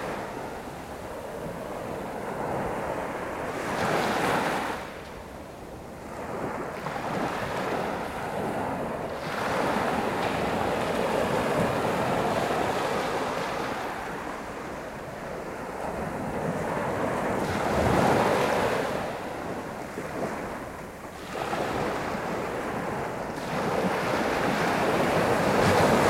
Frontignan, France - The beach of Frontignan
Sounds of the waves on the Frontignan beach. Recording made walking through the beach during 500 meters.
4 May 2016